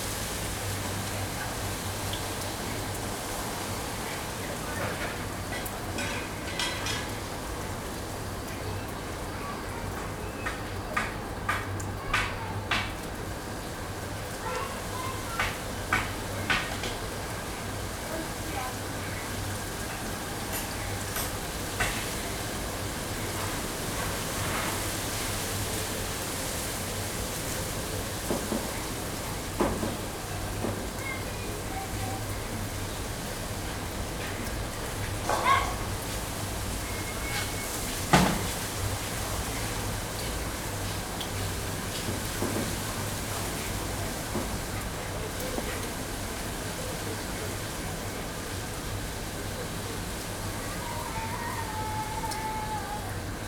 Broads Rd, Lusaka, Zambia - Lusaka backyard eve and heavy rains...
soundscapes of the rainy season...